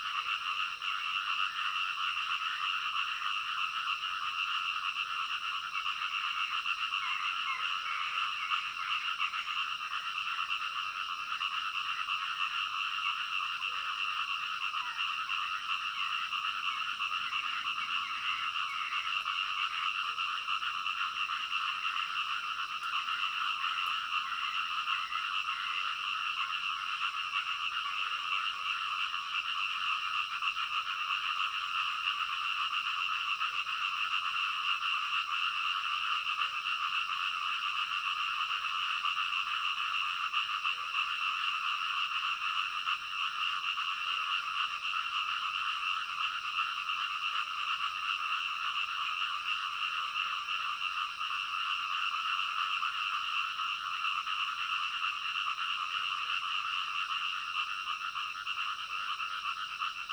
Zhonggua Rd., Puli Township, Nantou County - Frogs chirping
Frogs chirping, Early morning
Zoom H2n MS+XY